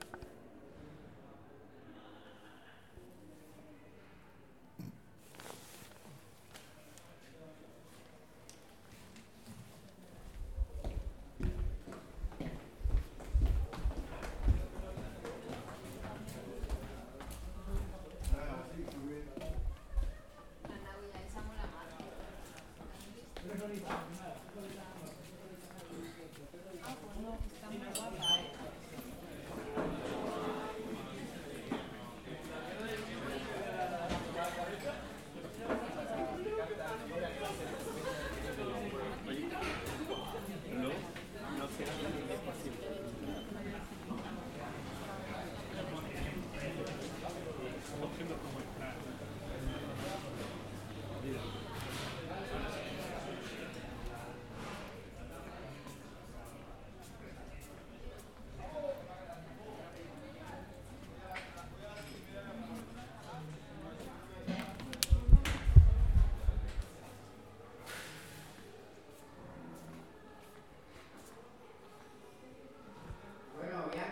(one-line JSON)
{"title": "Calle Marx, Madrid, España - Faculty cafeteria (dinamic sound)", "date": "2018-11-29 19:15:00", "description": "This audio shows a walk through the faculty cafeteria.\nYou can hear:\n- Footsteps of the corridors before entering the cafeteria.\n- Door sound\n- Bustle of people\n- Laughter\n- Sound of glasses, plates, chairs crawling...\nGear:\nZoom h4n\n- Cristina Ortiz Casillas\n- Erica Arredondo Arosa\n- Daniel Daguerre León\n- Carlos Segura García", "latitude": "40.55", "longitude": "-3.70", "altitude": "724", "timezone": "Europe/Madrid"}